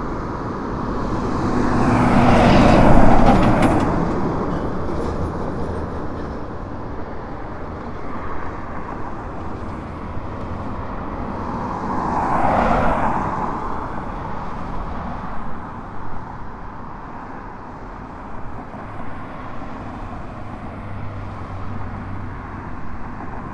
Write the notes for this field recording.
Vehículos pasando por el libramiento (Blvd José María Morelos). I made this recording on February 22, 2020, at 7:03 p.m. I used a Tascam DR-05X with its built-in microphones and a Tascam WS-11 windshield. Original Recording: Type: Stereo, Esta grabación la hice el 22 de febrero 2020 a las 19:03 horas.